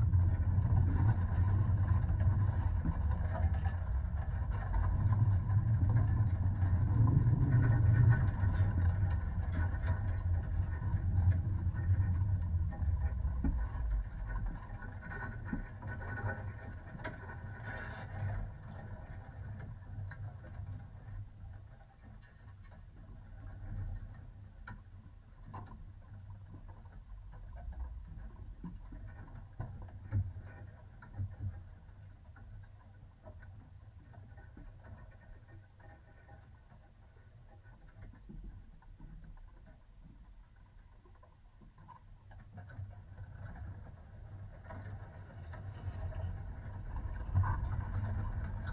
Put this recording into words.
remains of the fence-wire surrounding the abandoned soviet era basin. contact mics